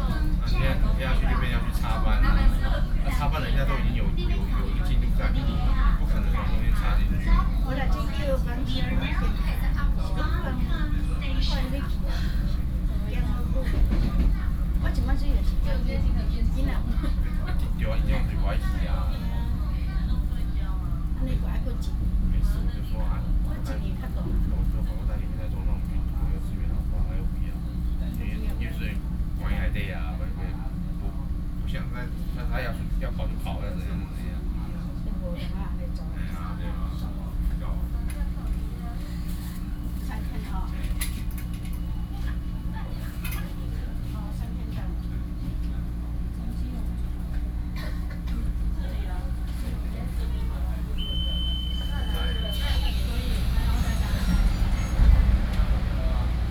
On the train, Binaural recordings